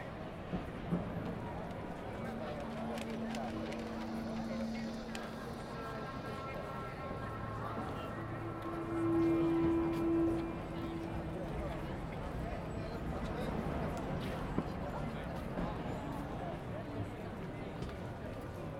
Piazza del Duomo -Milano - Solstizio d'estate, festa della musica
Persone in piazza, musiche provenienti da più direzioni, spazio ampio
Milano, Italy, 21 June 2015, ~22:00